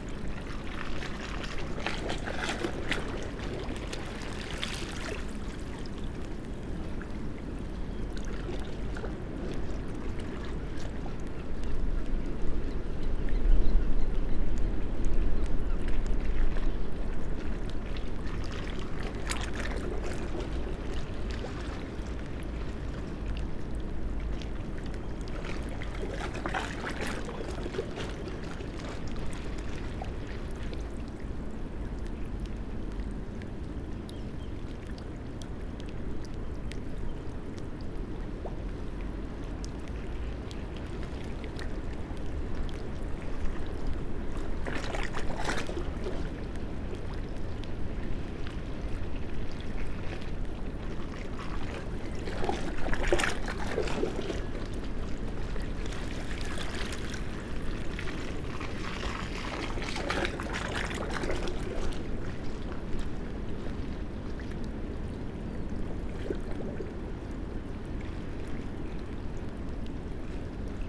Amble Pier, Morpeth, UK - pattering waves ... up ..? and back ..?
Amble pier ... pattering waves ... waves producing a skipping effect by lapping metal stancheons that separate the main stream from a lagoon ... recorded using a parabolic reflector ...
29 September 2017